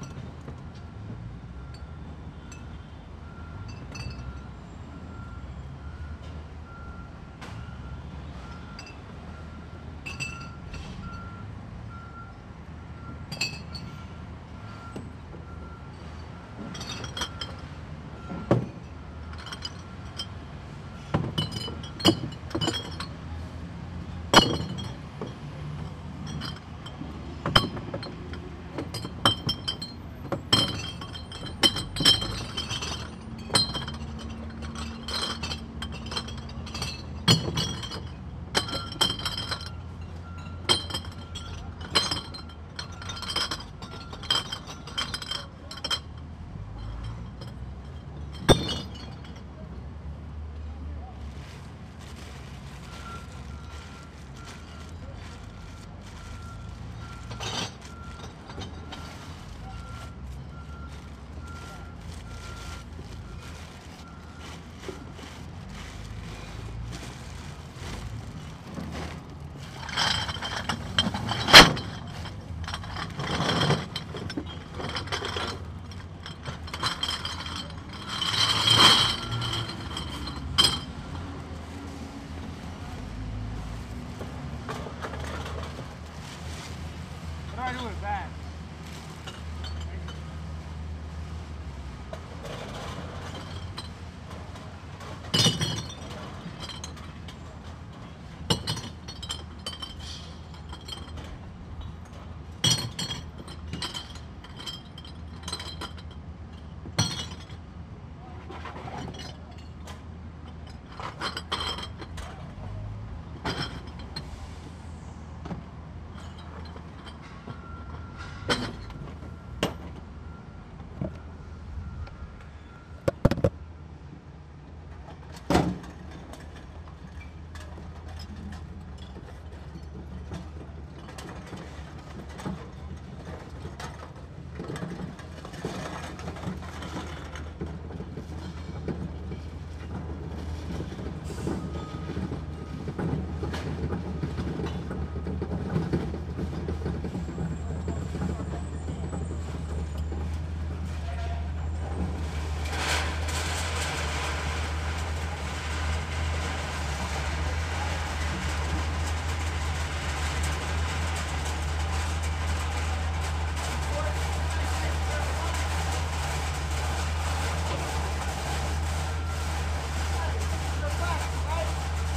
Northwest Berkeley, Berkeley, CA, USA - Berkeley - recycling center
recycling center ambience ..... beer bottles recycling worth of $10.46
21 May 2014, ~13:00